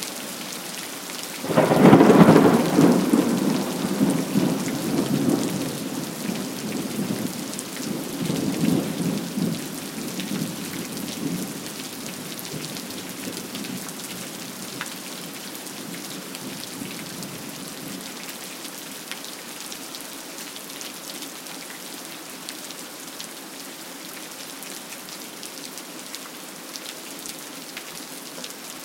SQN, Brasília, DF, Brasil - Rain and Thunders
Rain and thunders in the nightfall in Brasília, Brazil.
Federal District, Brazil, 14 February, ~18:00